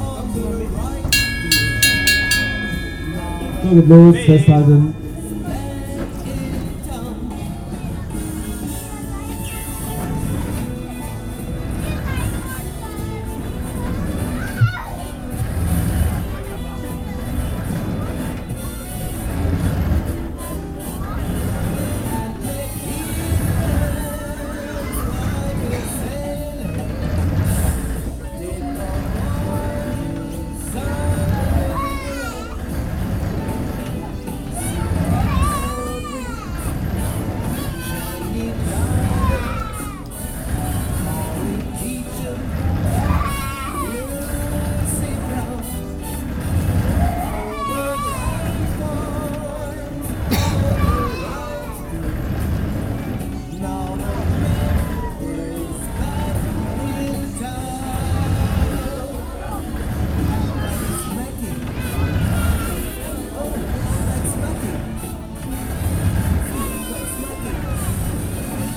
"schiffsschaukel" on a small christmas market nearby the street. the music and the sound of the machine
soundmap nrw - social ambiences and topographic field recordings